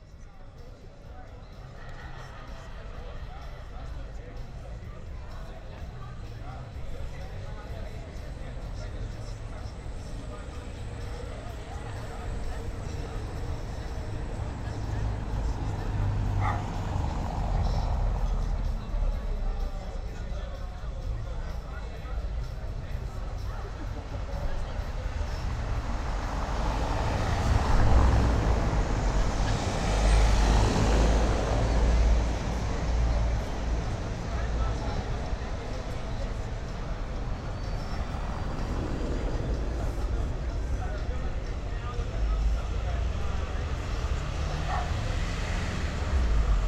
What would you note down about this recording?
A sculpture of an angel was placed in the central square of Užupis ("art" part of Vilnius). The bronze angel, has become the symbol of Užupis. Just standing at the sulpture and listening...